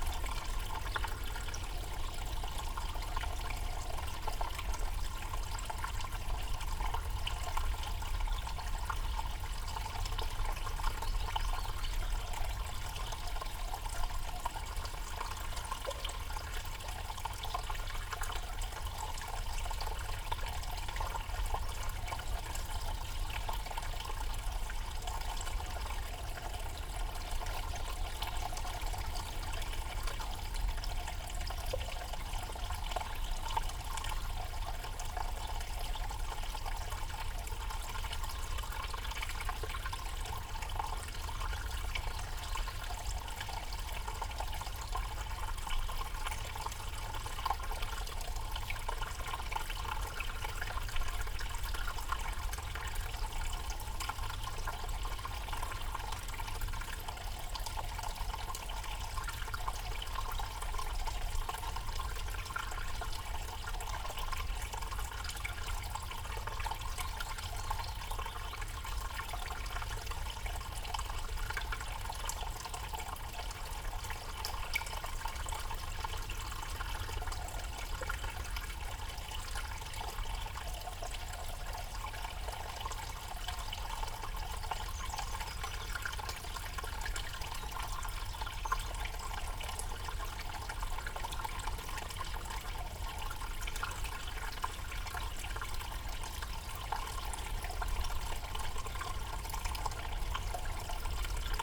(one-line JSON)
{"title": "Isle of Islay, UK - culvert under the road ...", "date": "2018-05-24 09:20:00", "description": "Culvert under the road ... open lavaliers dangled down at one end of a culvert ... bird song ... wren ...", "latitude": "55.83", "longitude": "-6.41", "altitude": "21", "timezone": "Europe/London"}